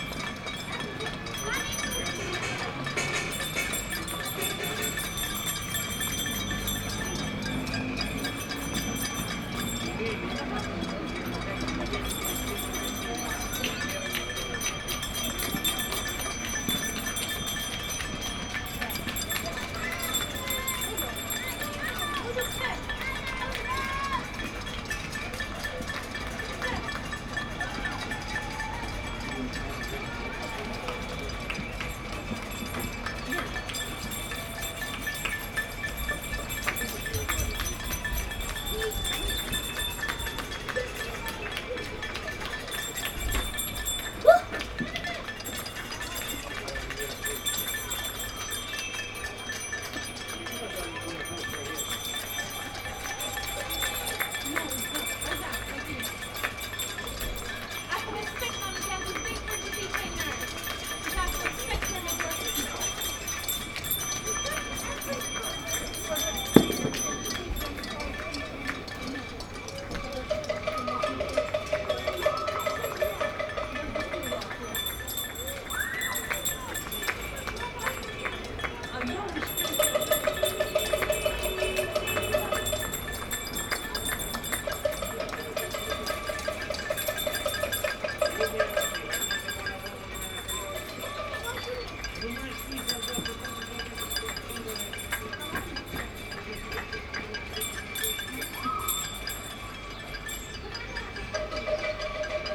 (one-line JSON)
{"title": "Mapesbury Rd, London, UK - Clapping for NHS", "date": "2020-04-23 19:59:00", "description": "recording clapping for NHS from my window", "latitude": "51.55", "longitude": "-0.21", "altitude": "62", "timezone": "Europe/London"}